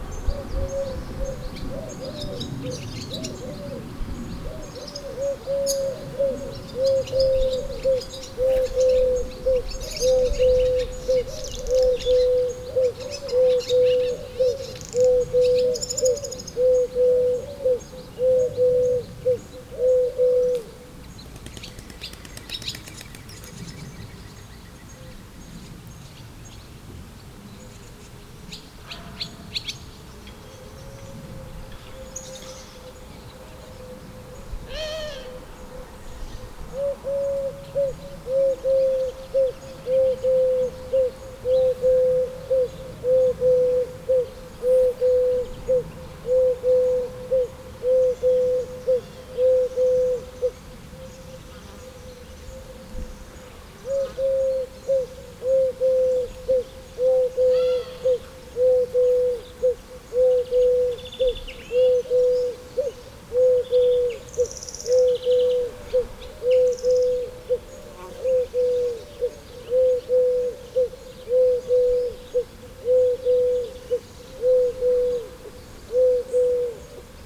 Chamesson, France - Turtledoves
In this small village of the Burgundy area, we are in a very old wash-house. Just near, turtledoves are singing a very throbbing vocal. Around, swallows are moving fast and singing on an electric wire.